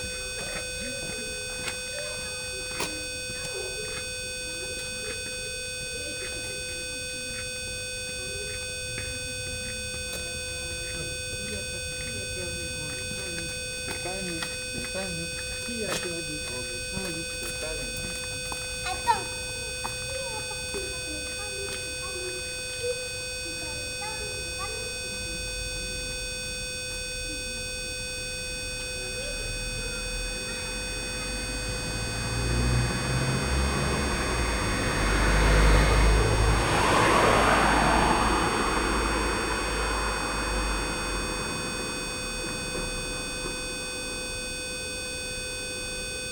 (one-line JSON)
{"title": "Rambouillet, France - Doorbells problem", "date": "2019-01-01 17:00:00", "description": "Walking in the Rambouillet city, I was intrigued by a curious sound. It's a doorbell problem. I stayed behind and recorded the unpleasant whistling. An old lady explains me it doesn't work and bawls out her small dog.", "latitude": "48.64", "longitude": "1.83", "altitude": "155", "timezone": "GMT+1"}